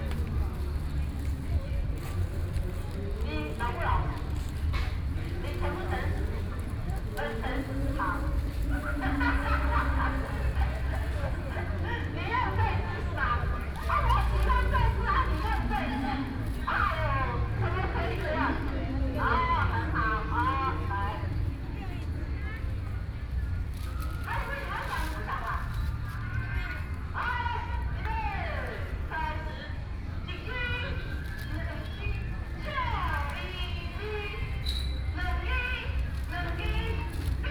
Zhongshan Park, Luodong Township - Story House
Story House, in the Park
Yilan County, Taiwan, 27 July 2014, 19:53